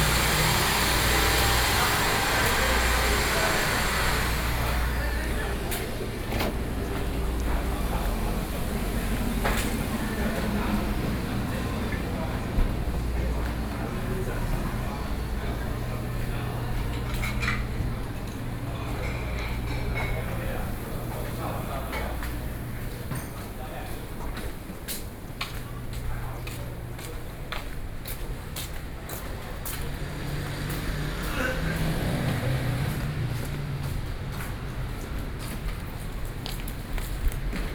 Taipei, Taiwan - in the market
31 October, 19:26